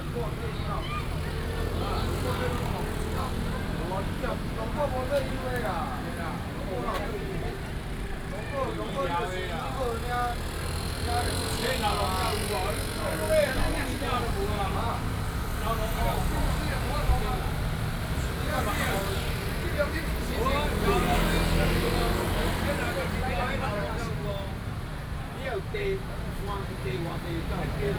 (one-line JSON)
{"title": "Sec., Mingdeng Rd., Ruifang Dist., New Taipei City - Taxi drivers", "date": "2012-06-05 17:32:00", "description": "In the square in front of the station, Taxi drivers\nSony PCM D50+ Soundman OKM II", "latitude": "25.11", "longitude": "121.81", "altitude": "63", "timezone": "Asia/Taipei"}